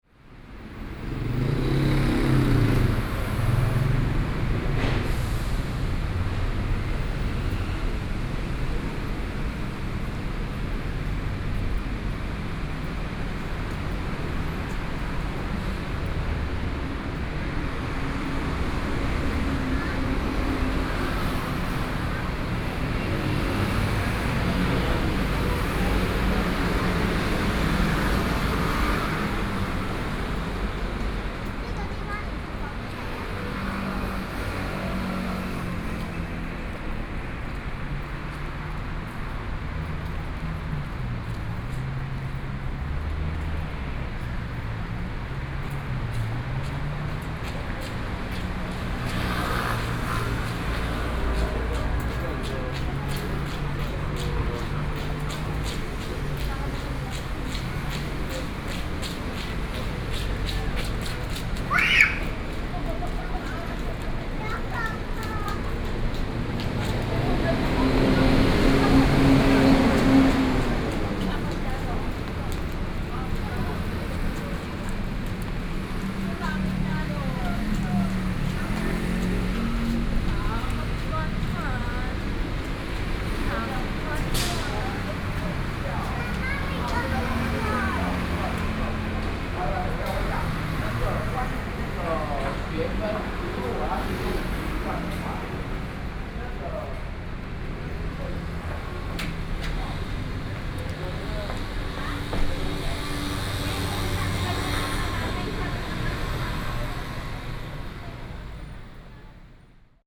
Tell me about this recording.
walking on the Road, Traffic Sound